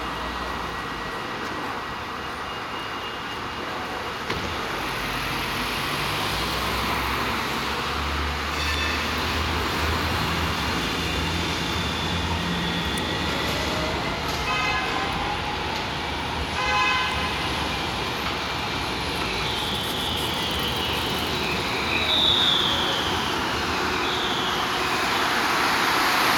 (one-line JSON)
{"title": "Mathenesserlaan, Rotterdam, Netherlands - Tram stop", "date": "2020-10-29 13:00:00", "description": "trams, cars, motorcycles.", "latitude": "51.91", "longitude": "4.46", "altitude": "3", "timezone": "Europe/Amsterdam"}